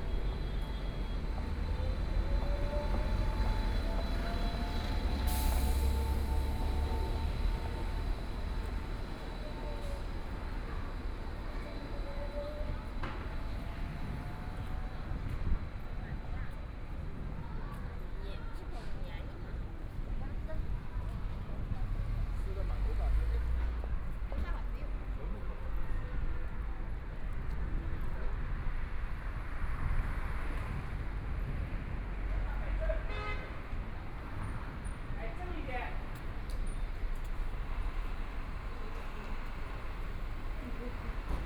Nan Quan Road North, Shanghai - in the street
Walking in the street, The crowd in the street, Traffic Sound, The sound of various transportation vehicles, Binaural recording, Zoom H6+ Soundman OKM II